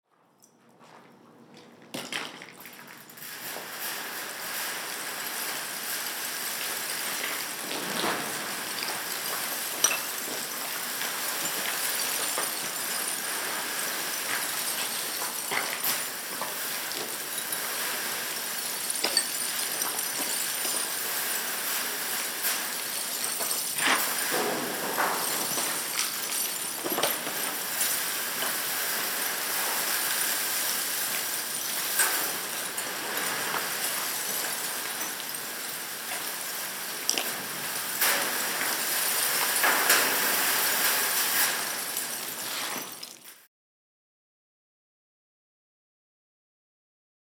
OUT OF ERA/Lille abandonned factory - OUT OF ERA/Granules & pipes
Playing with strange material in abandonned factory.